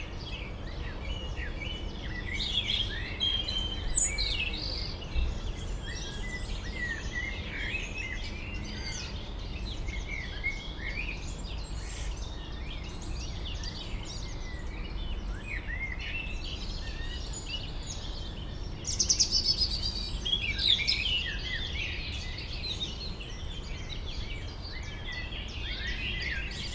Dans le bois de Memard 73100 Aix-les-Bains, France - rouge gorge
Un rouge gorge au premier plan entouré de merles et autre oiseaux dans ce petit bois près du Jardin Vagabond, en zone péri urbaine, arrière plan de la rumeur de la ville et des bateaux sur le lac.
France métropolitaine, France, 1 April, 11:30